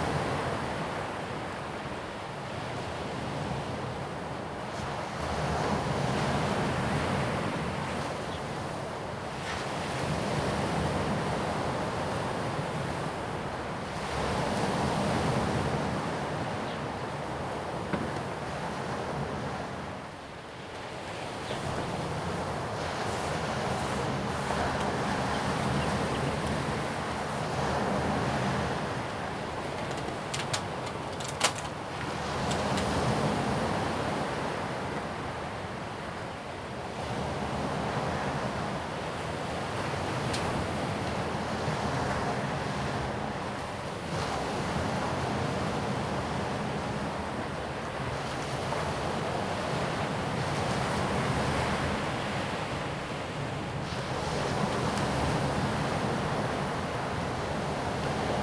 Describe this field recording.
at the seaside, Sound of the waves, Before the onset of heavy rains the beach, Zoom H2n MS+XY